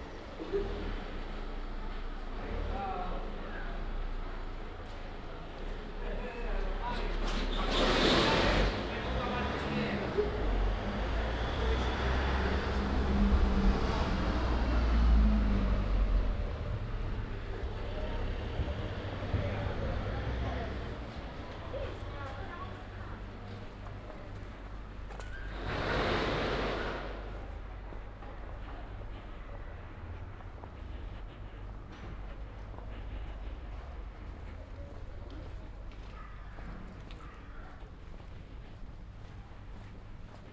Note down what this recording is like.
“No shopping in the open (closed) market at the time of covid19” Soundwalk, Chapter XX of Ascolto il tuo cuore, città, Tuesday March 24 2020. No shopping in the open air square market at Piazza Madama Cristina, district of San Salvario, Turin: the market is closed. Two weeks after emergency disposition due to the epidemic of COVID19. Start at 11:15 a.m., end at h. 11:41 p.m. duration of recording 25’57”'', The entire path is associated with a synchronized GPS track recorded in the (kml, gpx, kmz) files downloadable here: